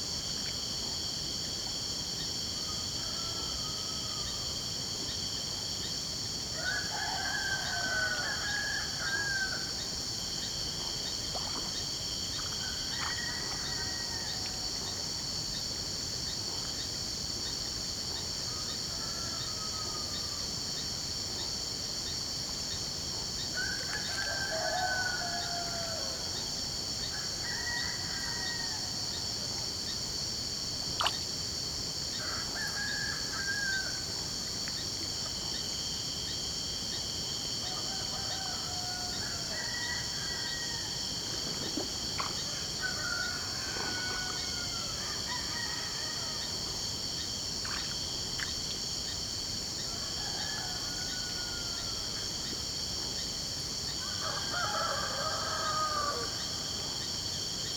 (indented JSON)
{
  "title": "Tauary, Amazonas - Dawn in a small village, in the Amazonian Rainforest",
  "date": "2017-09-15 07:09:00",
  "description": "Close to river in the small village of Tauary, the night is finishing and the day is starting... some rooster singing far away, crickets and light water movements (done by fishes).\nMicrophones ORTF Setup 2x Schoeps CCM4\nRecorder Sound Devices 633\nSound Ref: BR-170915T19\nGPS: -3.635208936293779, -64.9607665995801",
  "latitude": "-3.64",
  "longitude": "-64.96",
  "altitude": "36",
  "timezone": "America/Manaus"
}